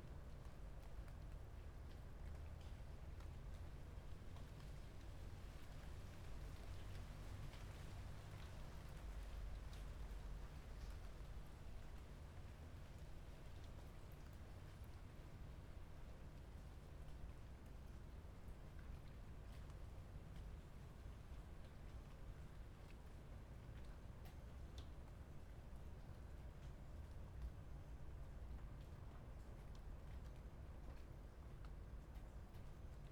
{"title": "Loughborough Junction, London, UK - daybreak 5 AM", "date": "2014-07-18 05:01:00", "description": "daybreak around 5 AM on World Listening Day 2014\nRoland R-9, electret stereo omnis, out an upstairs window onto back gardens in S London", "latitude": "51.46", "longitude": "-0.10", "altitude": "23", "timezone": "Europe/London"}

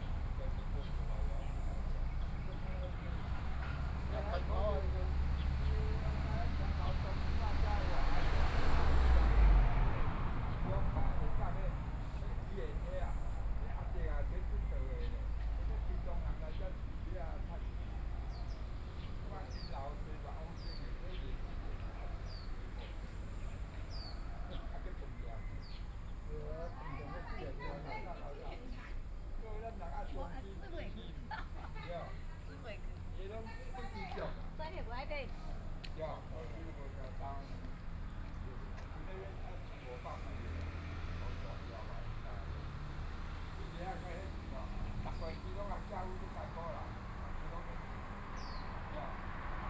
{"title": "美崙山公園, Hualien City - at the park entrance", "date": "2014-08-29 06:57:00", "description": "Birdsong, Morning at the park entrance, Traffic Sound\nBinaural recordings", "latitude": "23.99", "longitude": "121.61", "altitude": "21", "timezone": "Asia/Taipei"}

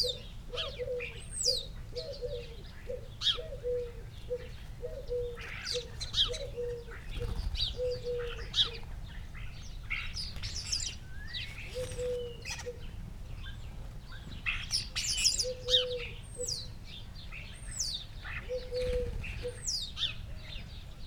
{"title": "Luttons, UK - starling calls soundscape ...", "date": "2019-12-26 08:21:00", "description": "starling calls soundscape ... purple panda lavaliers clipped to sandwich box to olympus ls 14 ... crow ... collared dove ... house sparrow ... blackbird ... dunnock ... robin ... wren ... blue tit ... jackdaw ... recorded close to bird feeders ... background noise ...", "latitude": "54.12", "longitude": "-0.54", "altitude": "79", "timezone": "Europe/London"}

